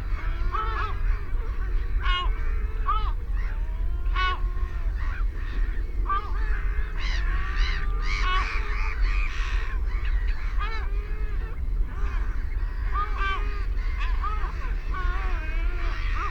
Stone Cottages, Woodbridge, UK - Belpers Lagoon soundscape ... late evening ...
Belpers Lagoon soundscape ... late evening ... RSPB Havergate Island ... fixed parabolic to minidisk ... calls from ... herring gull ... black-headed gull ... sandwich tern ... avocet ... redshank ... oystercatcher ... dunlin ... snipe ... ringed plover ... mallard ... shelduck ... canada goose ... background noise from shipping and planes ...
21 April 2005, 20:05